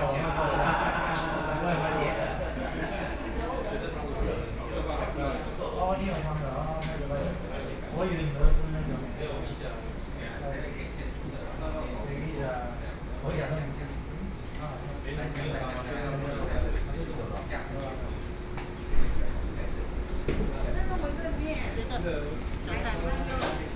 富岡里, Yangmei City - In the restaurant
In the restaurant